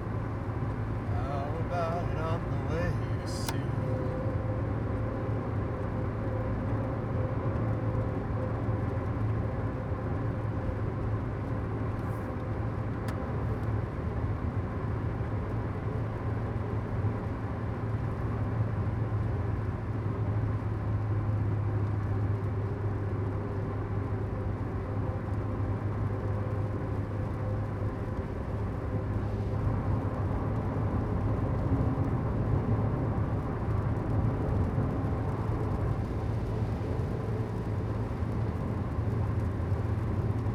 Thrimby Grange, Penrith, UK - Hail Storm after a 1.5 T MRI
Recorded with a Zoom H1 with a Lake Country Hail storm and the car stereo blathering.
England, United Kingdom